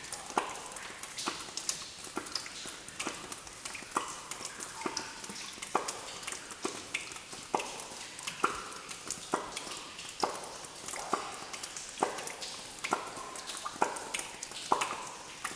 Differdange, Luxembourg - Rhythmic rain
Rhythmic rain in an underground mine, which access is very difficult.